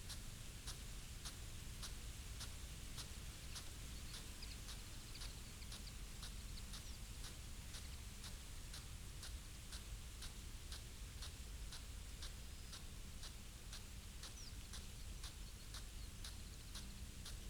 crop irrigation ... potatoes ... dpa 4060s clipped to bag to zoom h5 ... bird calls from ... yellow wagtail ... linnet ... wren ... pheasant ... wood pigeon ... sounds change as the spray hits cart track ...
North Yorkshire, England, United Kingdom, 22 July 2022, 06:00